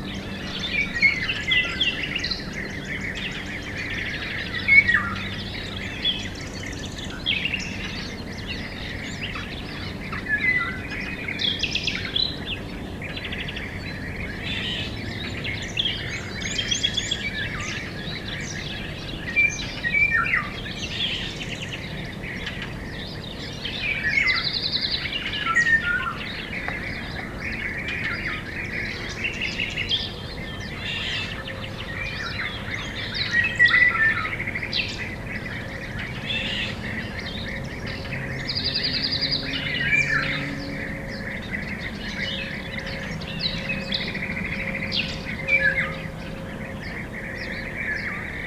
Loupian, France - 34140 Orioles
In this bauxite mine, today a big hole and a lake, orioles birds are confabulating.